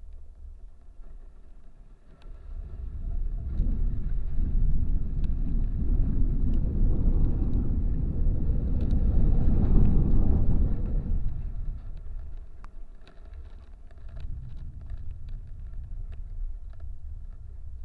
Utena, Lithuania - wind play on the first ice
contact microphones placed on a sheet of first ice
19 November 2014, 14:30